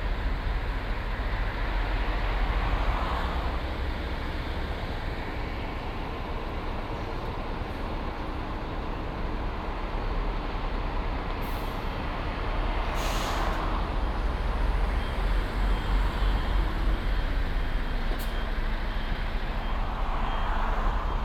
Olof-Palme-Damm, Kiel, Deutschland - Traffic and road works
Traffic on and below a bridge, one lane below the bridge is closed because of road works, trucks delivering asphalt waiting for discharging.
Binaural recording, Zoom F4 recorder, Soundman OKM II Klassik microphone